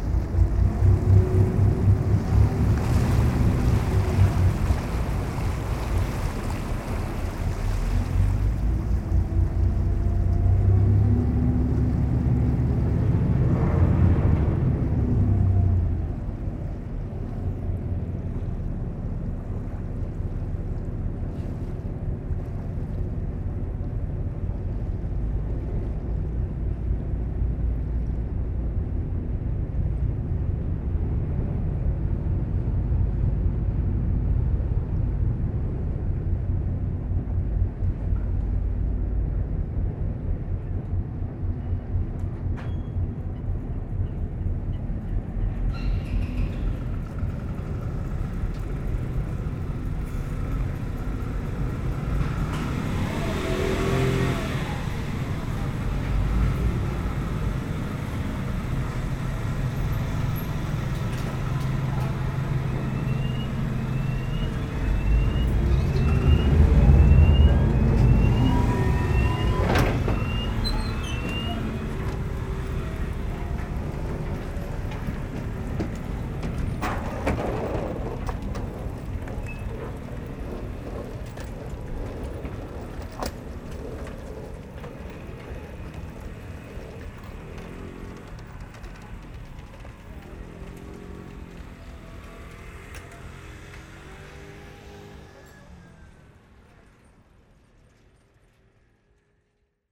Amsterdam, Nederlands - Central station Ferry
Het Ij, Veer centraal station. Crossing the river using the ferry.